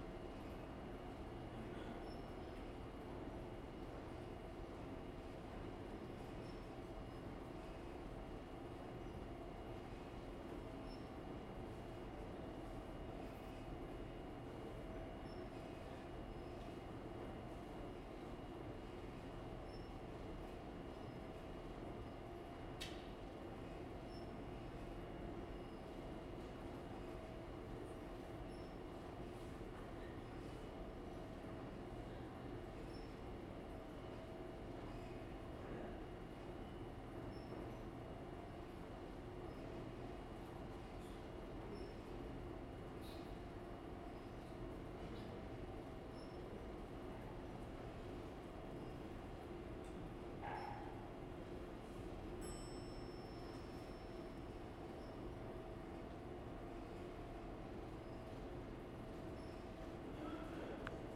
East Hollywood, Los Angeles, Kalifornien, USA - LA - underground station, early afternoon
LA - underground station vermont / santa monica, 2:30pm, distant voices, train arriving and leaving;
CA, USA, 24 January 2014, 14:30